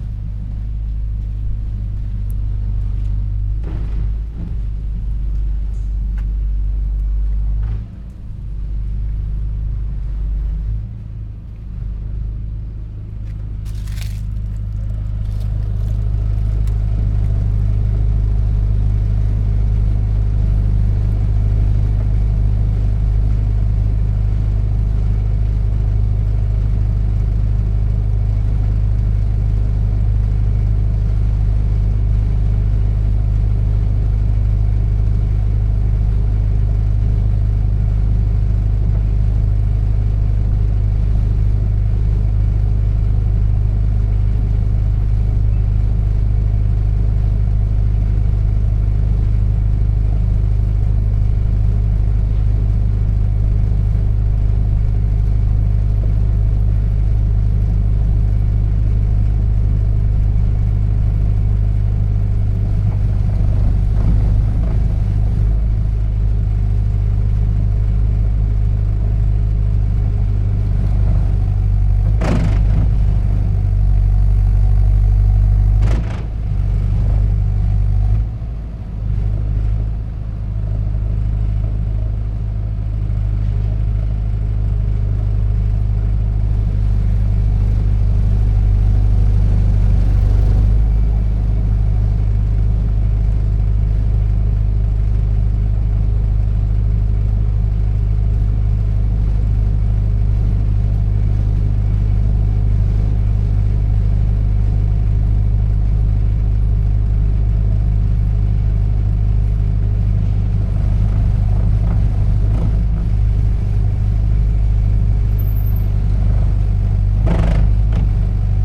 Maria-Theresialei, Antwerpen, Belgium - Walk to street works generator, Antwerp
This is a short walk to capture the low drones of a construction works generator that remains on all night, while the building is ongoing during the day. The audio file has a wide range of dynamics, with quieter walks at the start and finish, and the louder drones starting around the 1:30 mark.
Equipment: Sony PCM - D100 and a little bit of processing.
Vlaanderen, België / Belgique / Belgien, 20 September 2021, 19:30